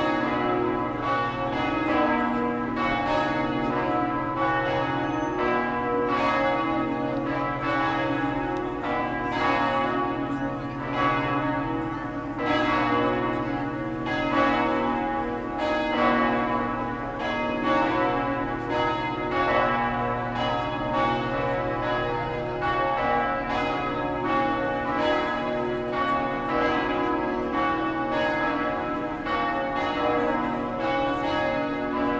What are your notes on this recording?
Zoom H4N Recorder. It is the parish church of the oldest parish in North America, and the first church in North America to be elevated to the rank of minor basilica by Pope Pius IX in 1874. It is designated a World Heritage church.